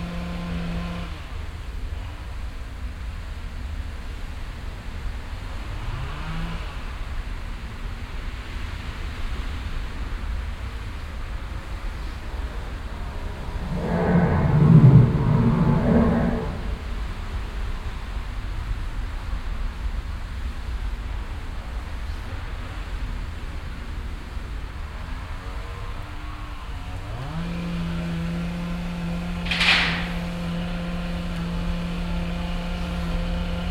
Mittags im Fussgängertunnel unter der stark befahrenen Jägerhofstrasse - Arbeitslärm vom Baumschnitt, Schritte und Fahrradfahrer - eine lose Gitterabdeckung.
soundmap nrw: social ambiences/ listen to the people - in & outdoor nearfield recordings